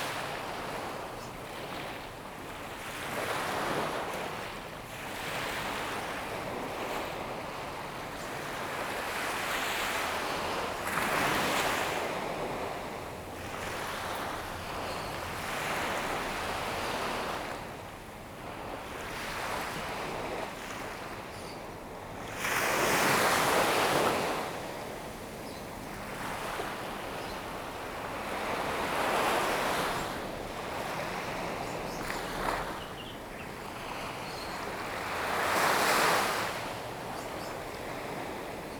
三芝區後厝里, New Taipei City, Taiwan - Small beach
Sound of the waves, Small beach
Zoom H2n MS+H6 XY
15 April 2016